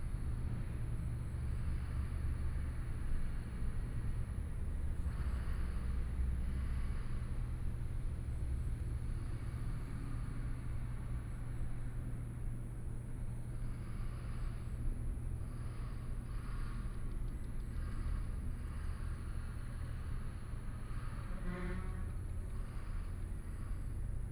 美崙海濱公園, Hualien City - Construction sounds harbor area
In the roadside park, Cicadas sound, Traffic Sound, Construction sounds harbor area
Binaural recordings
Hualien County, Taiwan, 27 August 2014, 18:34